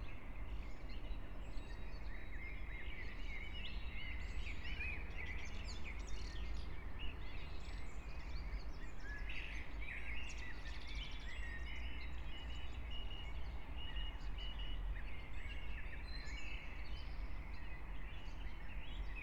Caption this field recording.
04:30 Brno, Lužánky park, Soundscapes of the Anthropocene, (remote microphone: AOM5024/ IQAudio/ RasPi2)